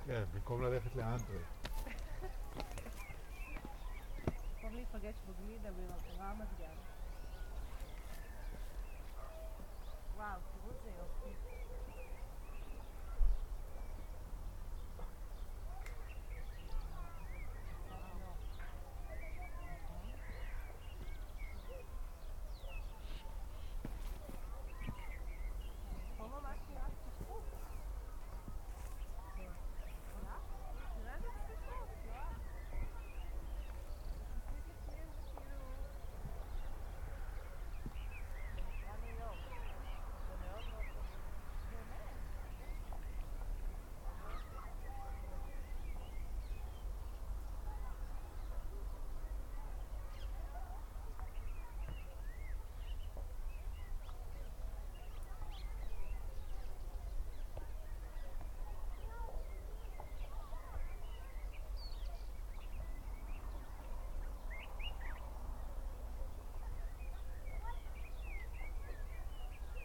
Klil, Israel - village spring sunset
the pretty village is all green in the spring blossom. sunset from uphill. far away people, all sort of birds, and surprised hikers pass by.
מחוז הצפון, ישראל, 21 April 2022